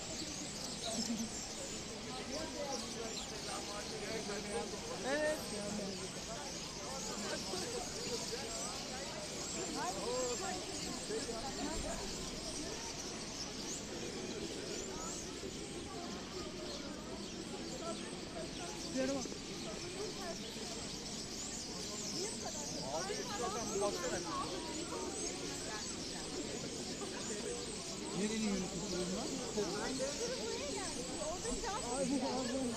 Istiklal Street, Istanbul, Turkey - Istiklal sound walk
sounds of starlings and the azan